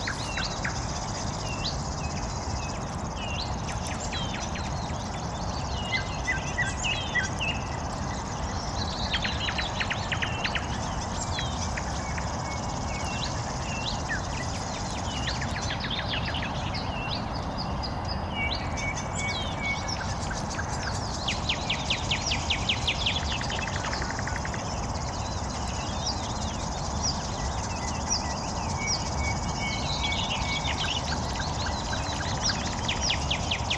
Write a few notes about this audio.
Morning, Birds, Campfire, Street Traffic